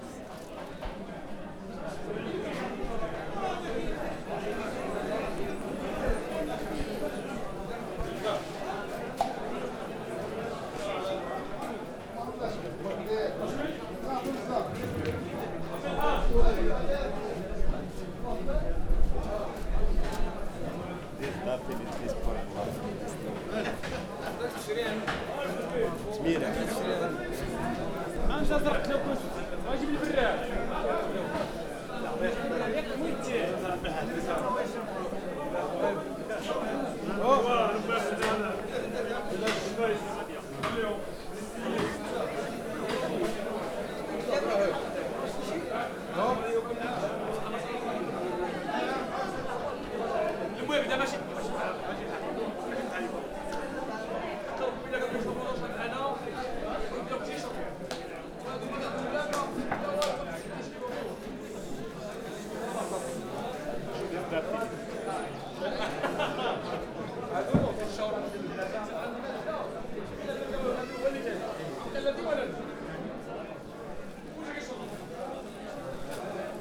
Marché Central Rissani, Marokko - Marché Central Rissani
People chatting on the market in Rissani. Zoom H4
Sijilmassa, Morocco, March 2019